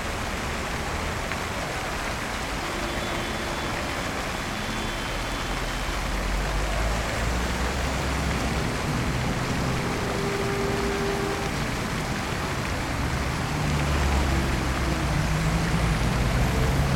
Foggia, Province of Foggia, Italy - traffic roundabout
piazza cavour is the main traffic node in Foggia, five roads meet in a roundabout in the middle of the square with a big fountain in it.
Foggia FG, Italy, 15 July 2015